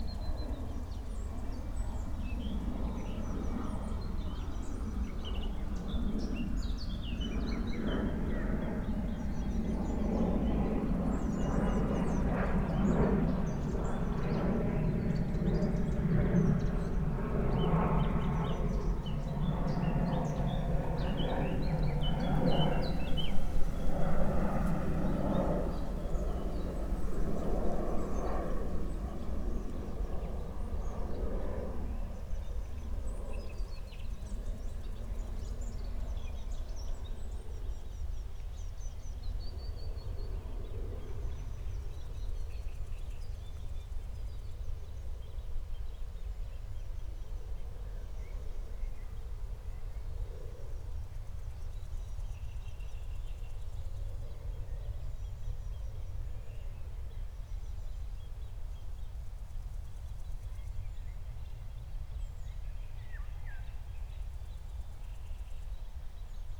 Berlin, Buch, Mittelbruch / Torfstich - wetland, nature reserve
12:00 Berlin, Buch, Mittelbruch / Torfstich 1
Deutschland, 19 June 2020, 12:00